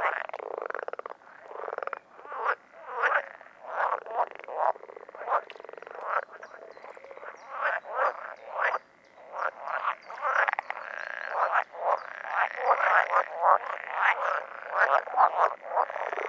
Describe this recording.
amazing sounds of frogs weeding:)